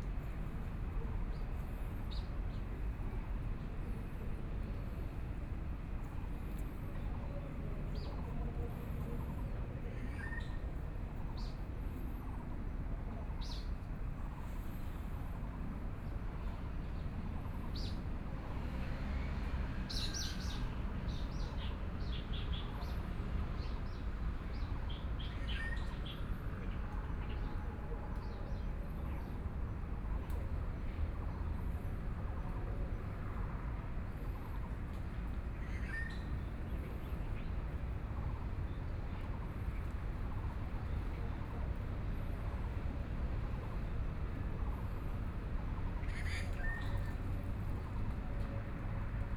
BiHu Park, Taipei City - in the Park
Frogs sound, Insects sound, Birdsong, Traffic Sound, Aircraft flying through